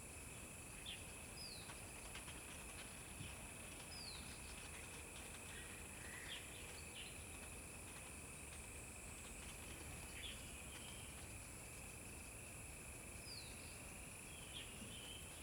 種瓜路, 桃米里 Puli Township - Bird sounds
Face to the woods, Bird sounds
Zoom H2n MS+XY
Nantou County, Taiwan, 5 May